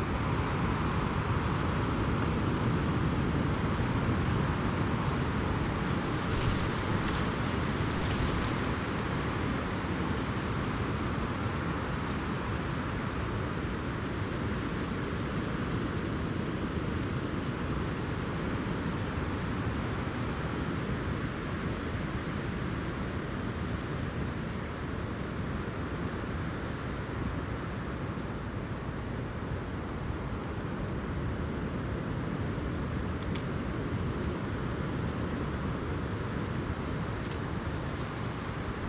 Ąžuolija, Lithuania, wind play
Strong wind. Reeds and trees.